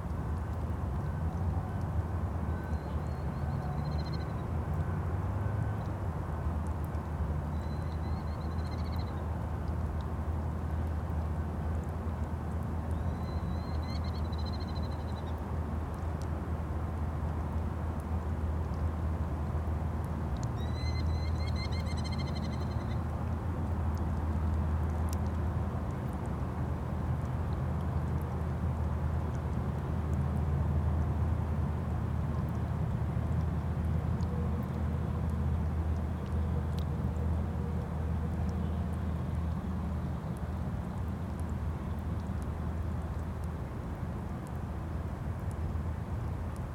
drips from melting ice on the Elbow river in Calgary
21 April, ~21:00